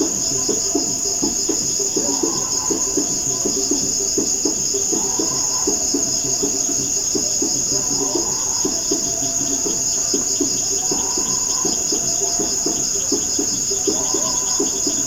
St. Gabriels School, Fontaine, Haiti - Fontaine Community Singing Heard from Rooftop at Night
Fontaine is a hamlet roughly an hours walk or a 15 minute moto ride from Pignon, one city in the Nord Department in central Haiti. The recording was done via H2N from the rooftop of a two-story school, recording the sounds of the night, which predominately features a gathering of song, likely though not yet confirmed to be by members of the Voodoo community.
Département du Nord, Haiti, 15 July 2019